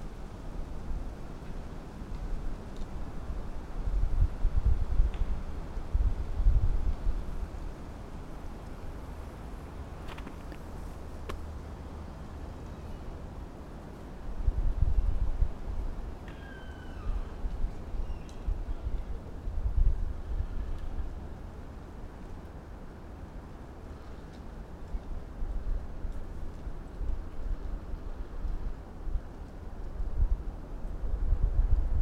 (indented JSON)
{
  "title": "dale, Piramida, Slovenia - distant creaks",
  "date": "2013-03-17 18:10:00",
  "description": "winds and creaking trees from afar",
  "latitude": "46.58",
  "longitude": "15.65",
  "altitude": "376",
  "timezone": "Europe/Ljubljana"
}